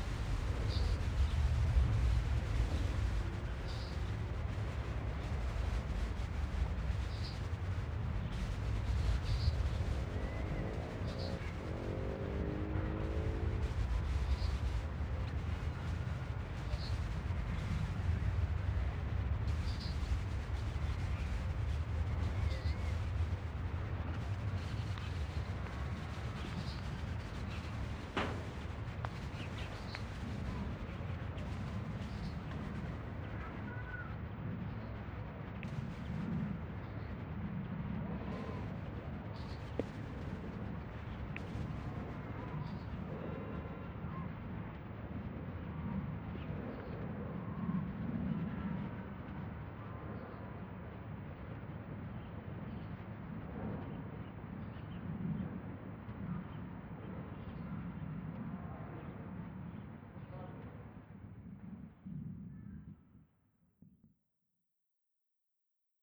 Basbellain, Luxemburg - Basbellain, evening atmosphere in the fields
Abendstimmung an einem milden Sommerabend. Vogelstimmen, entfernte Geräusche von Kühen und Schafen, Windbewegungen in den Büschen.
In der Ferne die Glocke der Kirche. Es ist 21:30 Uhr
Atmosphere during a mild summer evening. Bird voices, distant cow and sheep sounds, wind movements in the nearby bushes. In the distance the church bell. It is 9.30 p.m. A motorbike
is passing by.
Luxembourg, 4 August 2012